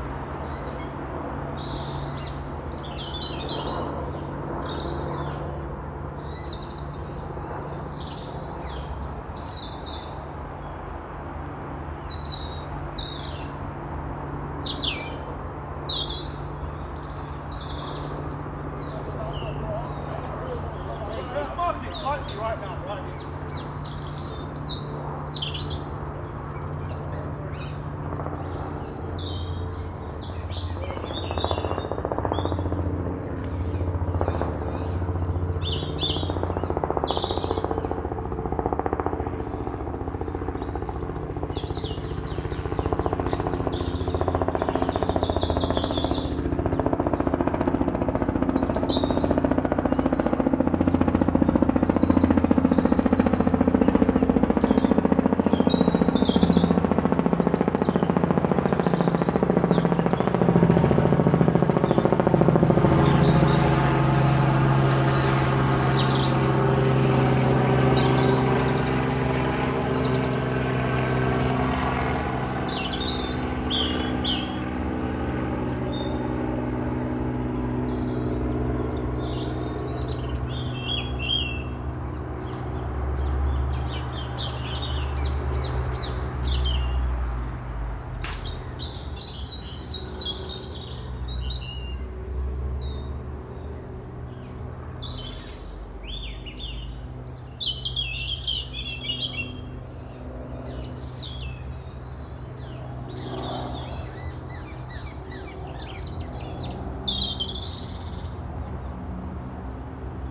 Leg O Mutton park (London) - Leg O Mutton park
Sony PCM D100. Leg O Mutton park near Thames path. Lots of birds including parakeet that live nearby. As it is London there is also some traffic in the background. Sonically interesting helicopter fly-by.
London, UK, April 2018